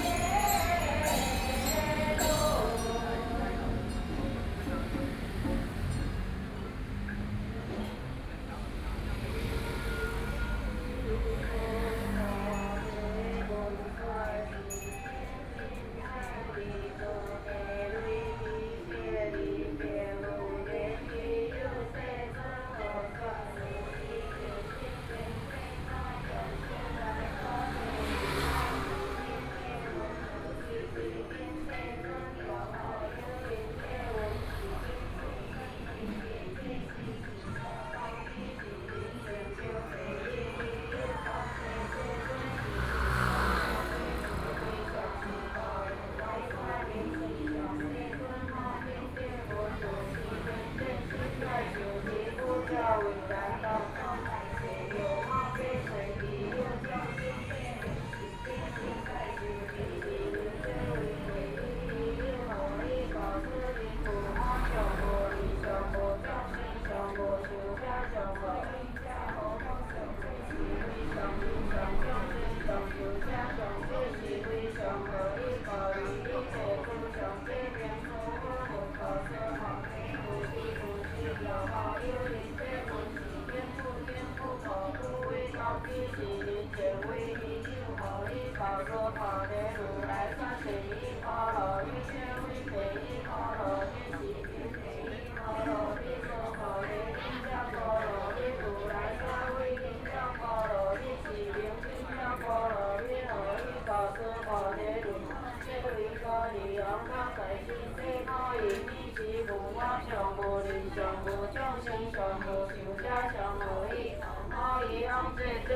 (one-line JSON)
{"title": "北投區桃源里, Taipei City - Temple festivals", "date": "2014-02-21 20:50:00", "description": "Temple festivals, Beside the road, Traffic Sound, Fireworks and firecrackers, Chanting, Across the road there is Taiwan Traditional opera\nPlease turn up the volume\nBinaural recordings, Zoom H4n+ Soundman OKM II", "latitude": "25.14", "longitude": "121.49", "timezone": "Asia/Taipei"}